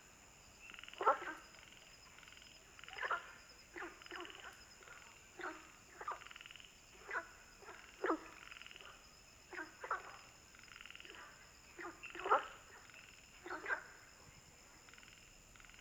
三角崙, 魚池鄉五城村, Taiwan - Frogs chirping
Ecological pool, In the pool, Frogs chirping, Bird sounds, Firefly habitat area
Zoom H2n MS+XY
Puli Township, 華龍巷164號, 19 April